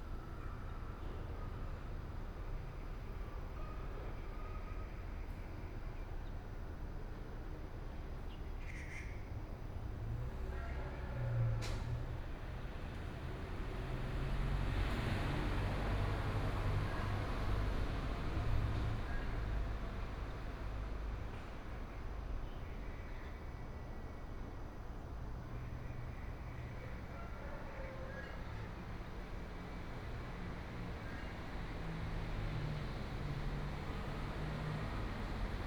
新城新豐宮, Baoshan Township - Small village
In the square of the temple, Small village, Chicken cry, Dog sounds, Bird call, Traffic sound, Binaural recordings, Sony PCM D100+ Soundman OKM II